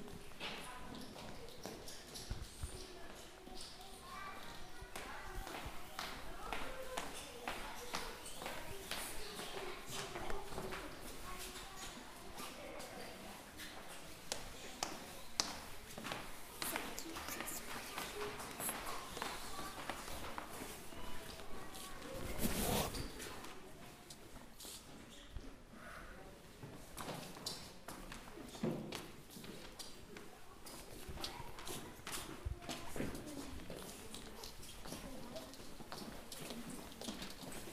Ecole Ampère - Neudorf, Strasbourg, France - Inside the school
Recording of some childrin walking through the school.
November 2016